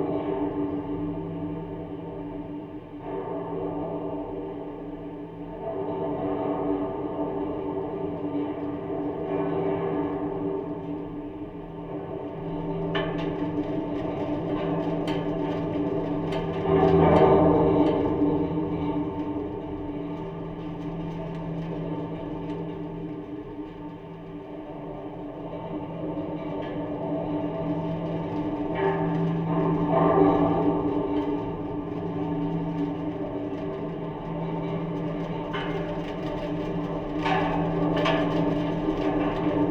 Lithuania, Ginuciai, watchtower
contact microphones on the mobile tower/watchtower
13 October 2013